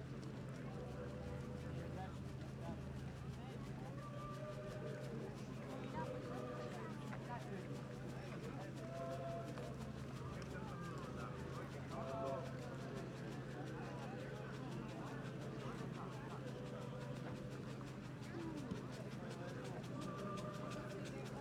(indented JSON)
{
  "title": "Lithuania, Kernave, Festival of Experimental Archaeology",
  "date": "2017-07-08 14:14:00",
  "description": "18th International Festival of Experimental Archaeology „DAYS OF LIVE ARCHAEOLOGY IN KERNAVĖ“",
  "latitude": "54.88",
  "longitude": "24.85",
  "altitude": "106",
  "timezone": "Europe/Vilnius"
}